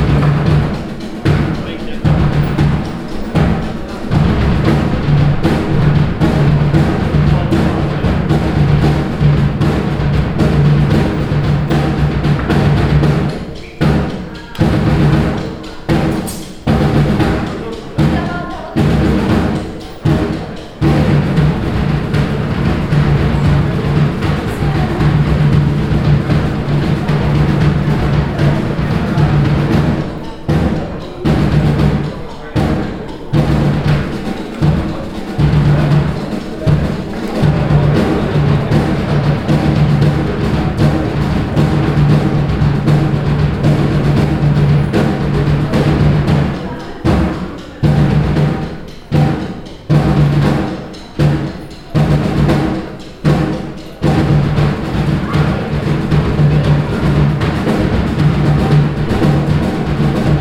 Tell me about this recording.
An extened recording of a drum corp performing on the castles terrace while other performer throw and juggle with flags accompanied by some audience reactions. Recorded during the annual medieval festival of the castle. Vianden, Schlossterrasse, Trommler, Eine längere Aufnahme von Trommlern, die auf der Schlossterrasse auftreten, während andere Künstler mit Flaggen jonglieren, einige Zuschauerreaktionen. Aufgenommen während des jährlichen Mittelalterfestes im Schloss. Vianden, terrasse du château, joueurs de tambours, Un long enregistrement d’un groupe de joueurs de tambour sur la terrasse du château tandis que d’autres artistes jonglent avec des drapeaux accompagnés par les réactions du public. Enregistré lors du festival médiéval annuel au château. Project - Klangraum Our - topographic field recordings, sound objects and social ambiences